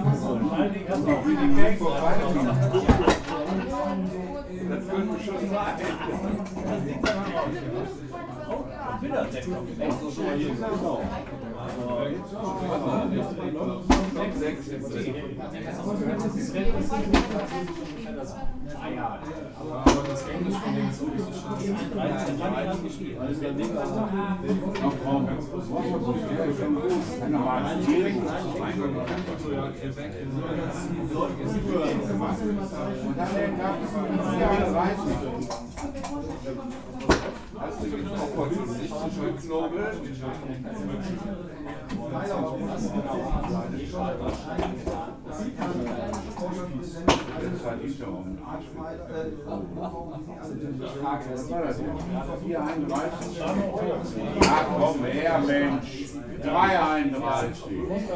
Rüttenscheid, Essen, Deutschland - die eule
die eule, klarastr. 68, 45130 essen